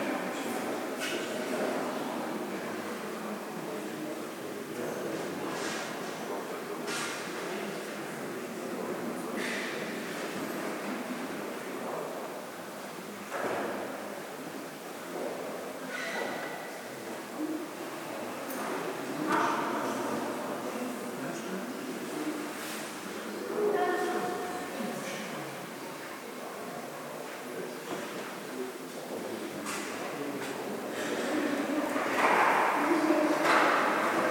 tondatei.de: klosterkirche roggenburg
klosterkirche, glocken, gebimmel
11 September, ~10:00